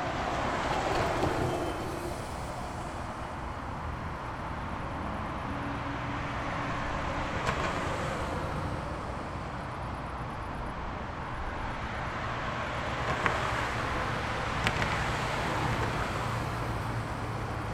Berlin Wall of Sound, Dreilinden, Potsdamer Chaussee 120909